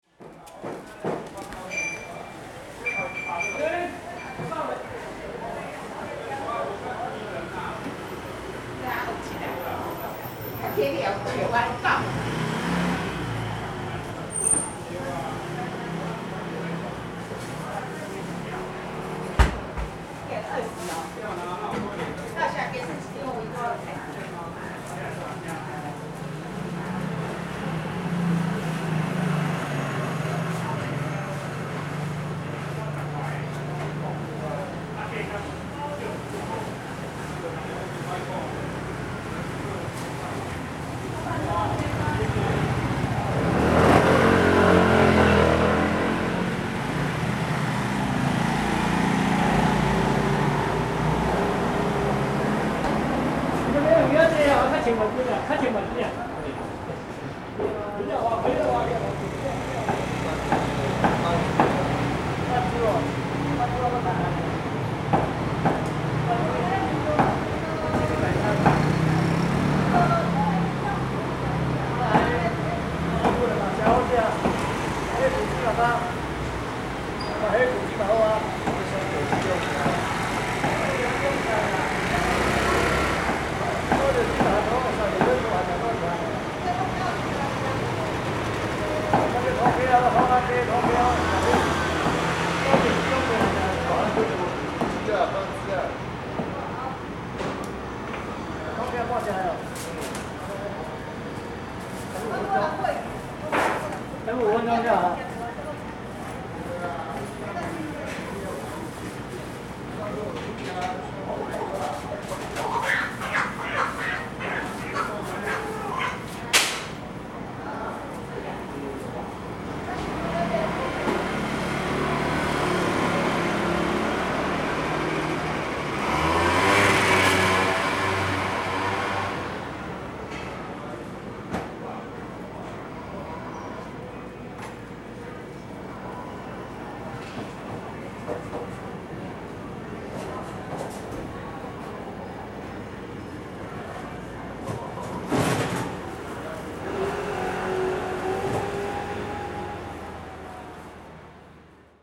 Walking through the traditional market, Traffic Sound
Sony Hi-MD MZ-RH1 +Sony ECM-MS907